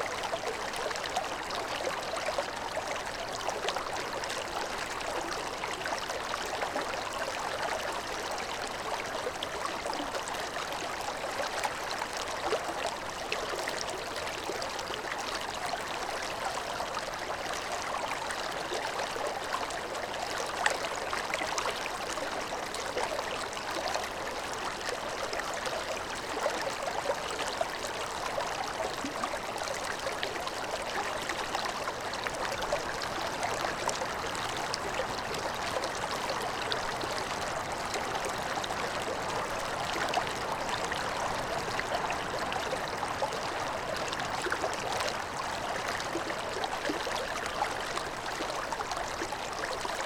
Boulder, CO, USA - slow water
Ice fishing on the south boulder creek